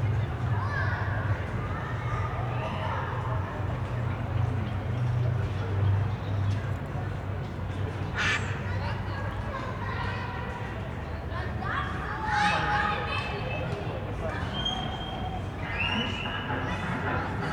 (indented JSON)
{
  "title": "Berlin Gropiusstadt - ambience at Gropiushaus",
  "date": "2012-09-23 15:50:00",
  "description": "Sunday afternoon, Equinox, first autumn day. ambience at Gropishaus, lots of echoing sounds from in and outside.\n(SD702, Audio Technica BP4025)",
  "latitude": "52.43",
  "longitude": "13.47",
  "altitude": "54",
  "timezone": "Europe/Berlin"
}